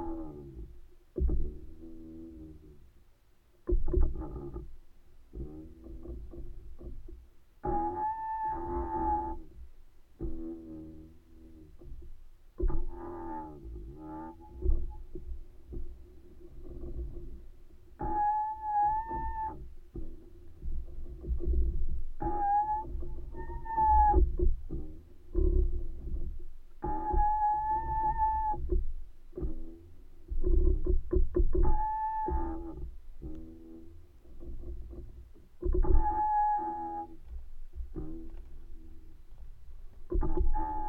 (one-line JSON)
{"title": "Utena, Lithuania, tree that plays", "date": "2021-03-04 16:50:00", "description": "I have named this pine tree \"a cello\". Avantgarde, with SunnO))) overtones...contact mics recording.", "latitude": "55.52", "longitude": "25.63", "altitude": "137", "timezone": "Europe/Vilnius"}